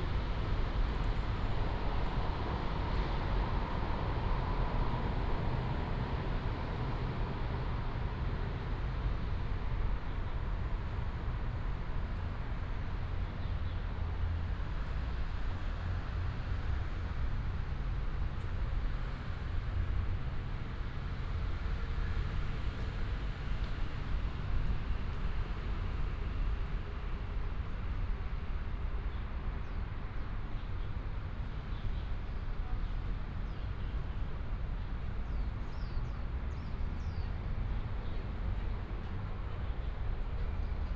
舊社公園, Beitun Dist., Taichung City - Walking in the park
Walking in the park, Traffic sound, Site construction sound, Bird call, Binaural recordings, Sony PCM D100+ Soundman OKM II
Beitun District, Taichung City, Taiwan, 1 November 2017, ~15:00